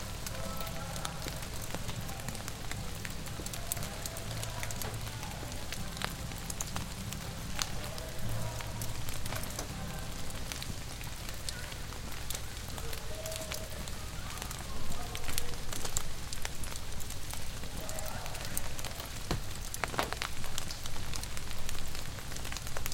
Kejimkujik National Park Campers Singing, rain, flickering fire